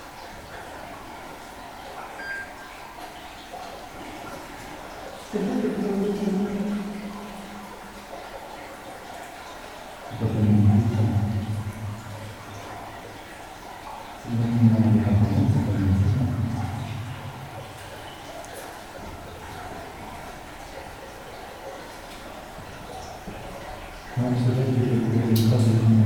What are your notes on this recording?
Discussing about the way we will take into the underground mine. There's so much reverb that it's difficult to understand one word of what we say.